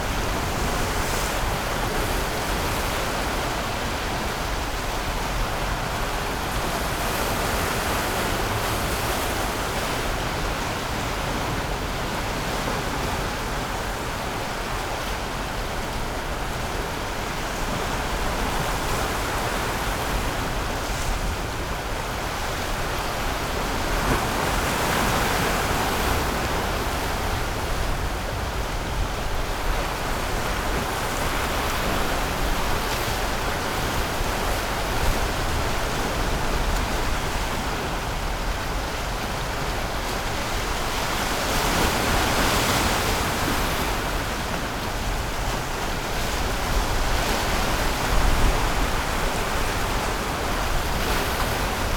Gushan, Kaohsiung - The sound of the waves